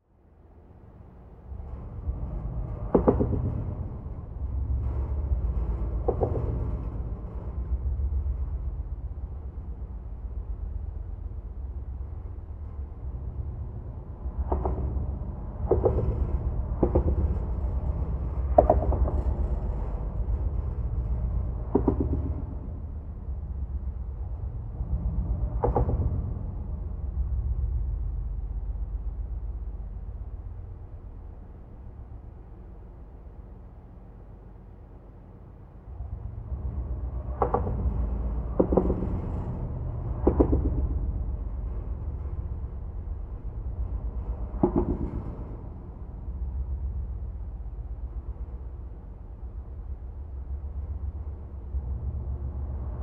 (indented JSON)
{
  "title": "Garrison, NY, USA - Route 9D bridge",
  "date": "2020-02-22 12:05:00",
  "description": "Sound of cars passing on Route 9D bridge.\nRecorded placing the microphone on the bridge's water pipe.",
  "latitude": "41.41",
  "longitude": "-73.93",
  "altitude": "75",
  "timezone": "America/New_York"
}